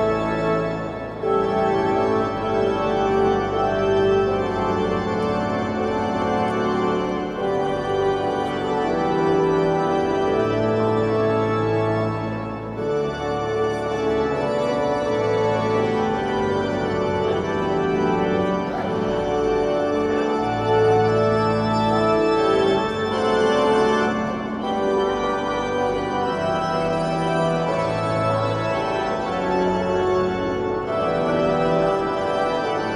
Mariendom, Neviges, Germany - Organ at the end of the church service
Organ at the end of the church service celebrating the end of a silesian "Mother Anna Pilgrimage"
27 July 2009, 15:31